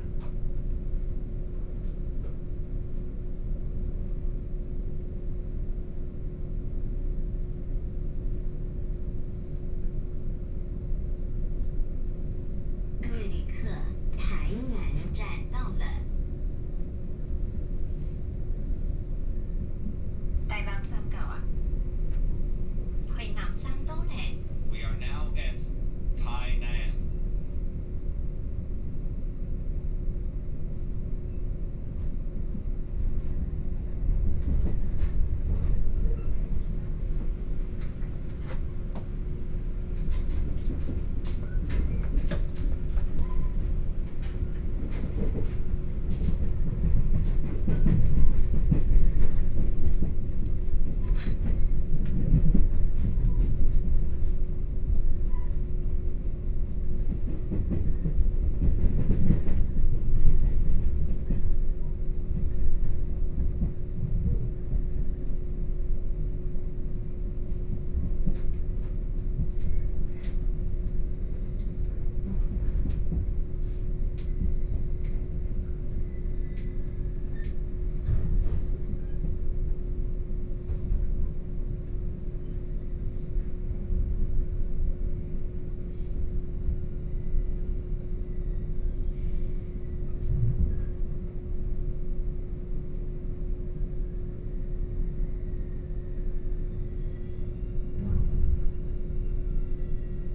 Tze-Chiang Limited Express, to Tainan station
North District, Tainan City, Taiwan, 3 September 2014